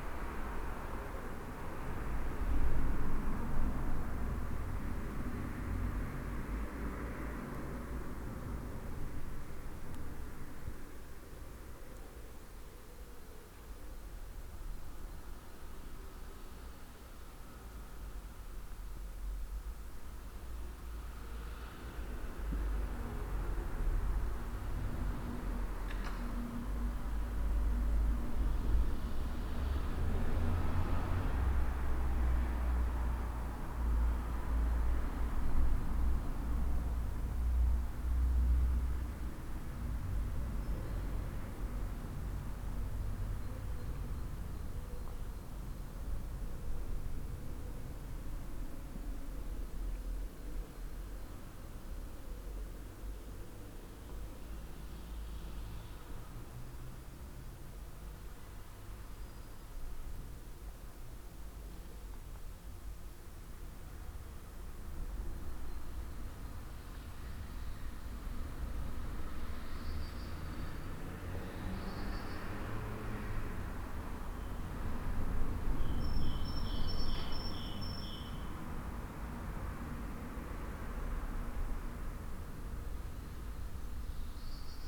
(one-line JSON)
{"title": "Thomaskirche, Hamm, Germany - Thomaskirche", "date": "2020-04-12 11:20:00", "description": "empty church, wide open doors... Easter stay-at-home...", "latitude": "51.66", "longitude": "7.79", "altitude": "66", "timezone": "Europe/Berlin"}